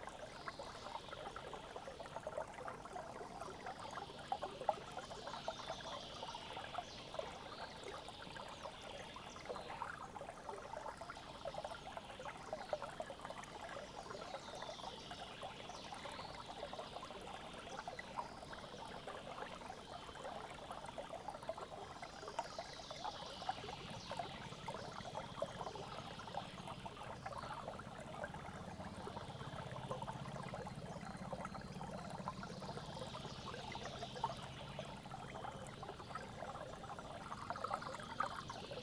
Naujasodis, Lithuania, manor's park - Alanta, Lithuania, manor's park
stream in Alanta's manor park